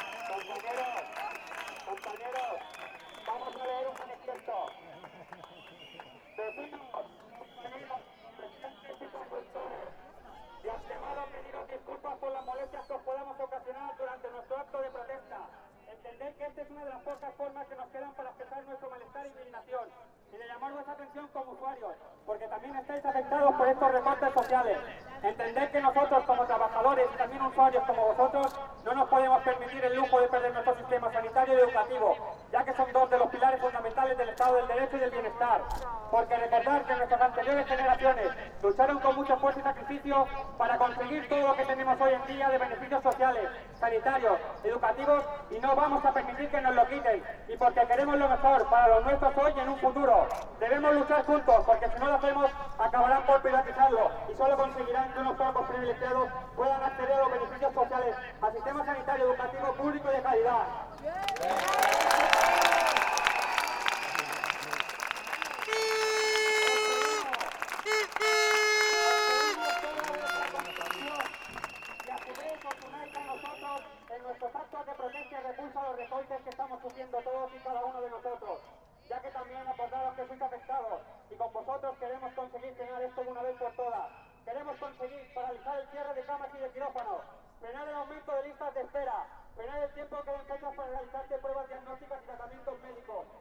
Manifestació Sanitat
Movement against the economic cuts in the health service, afecting what is understood as a service and not as an elit privilege.
Barcelona, Spain, April 2011